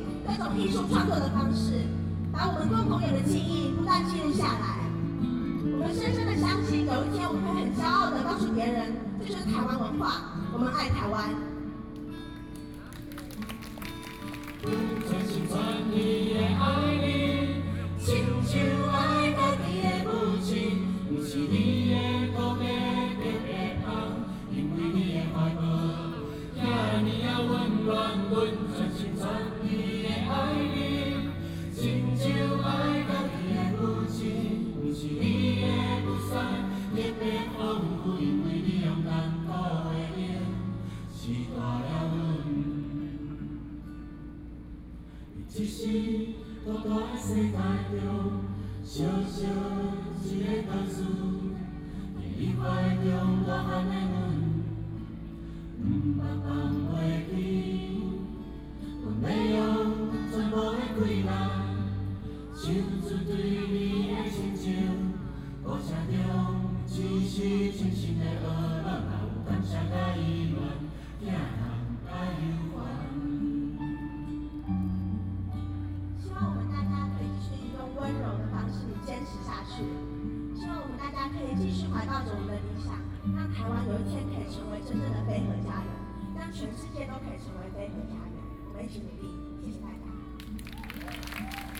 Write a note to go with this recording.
A group of college students singing, Anti-Nuclear Power, Zoom H4n+ Soundman OKM II